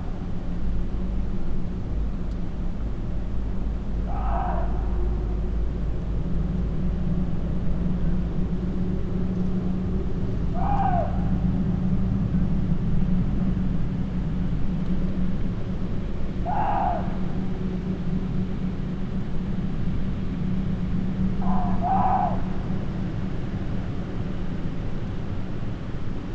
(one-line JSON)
{"title": "Muntjack on Malvern Hills, Malvern, UK - Muntjack", "date": "2021-06-14 01:13:00", "description": "These very shy and infrequent visitors to our area are heard moving across the landscape of the hills on a windy night. I believe there are 2 of these deer in this clip captured with the microphones on the roof of the house.\nI have placed the location where I believe the Muntjacks might be.\nMixPre 6 II with 2 x Sennheiser MKH 8020s.", "latitude": "52.08", "longitude": "-2.34", "altitude": "239", "timezone": "Europe/London"}